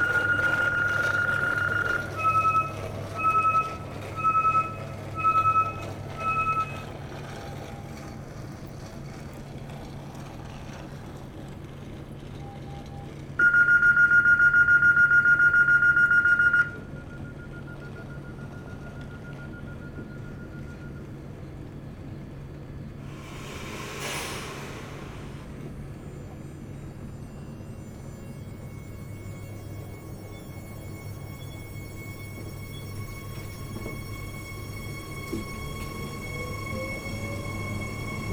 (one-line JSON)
{"title": "Maintenon, France - Maintenon station", "date": "2016-12-27 11:23:00", "description": "People are discussing on the platform, one gives explanations about how he made his christmas gift hidden, as it was so much uggly. The train to Paris arrives and a few time later, leaves the platform.", "latitude": "48.59", "longitude": "1.59", "altitude": "122", "timezone": "GMT+1"}